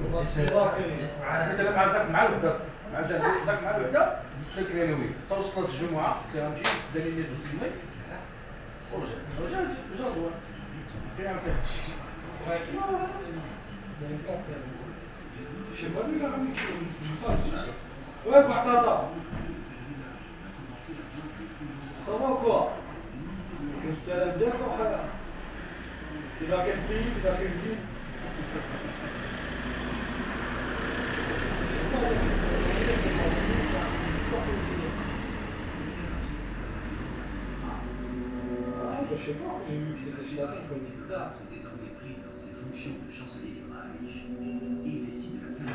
Sens, France - My brother neighbours
Living in a longhouse, my bother has funny neighbours. It's a motivated team of poker players. They play very often, sometimes win a lot but also sometimes loose very much ! On this quiet evening, they are discussing in their home.
28 July, 20:45